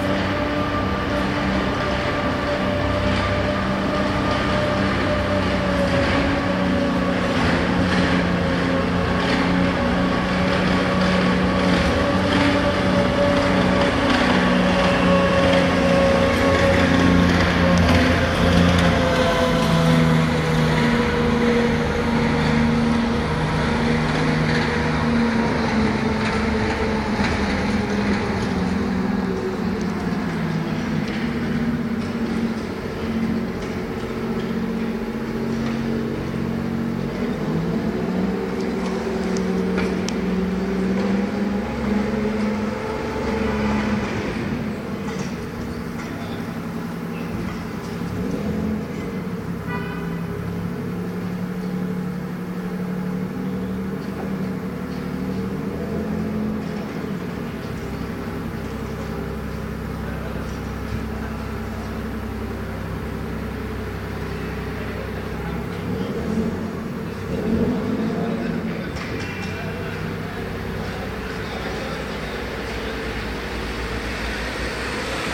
Alexandria, Al Attarine, Sound of a Tank
The sound was recorded at the end of the first curfew in Alex. Military tanks were taking position on the streets due to the absence of police.